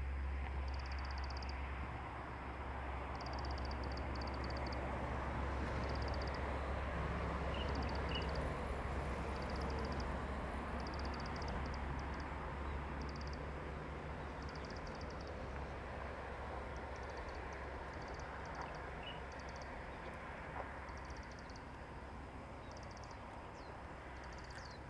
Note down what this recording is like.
Birds singing, Traffic Sound, Zoom H2n MS+XY